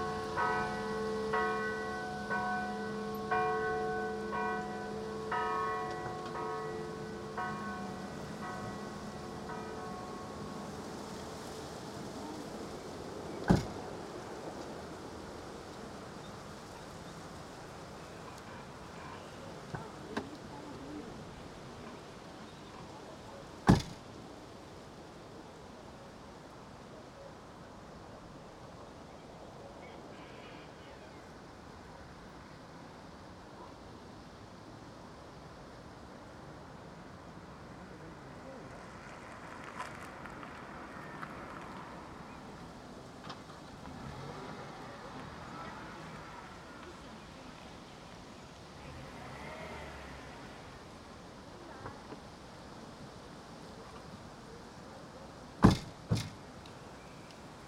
Kapela, Nova Gorica, Slovenija - Zvonenje
Church bell ringing.
Nova Gorica, Slovenia, June 2017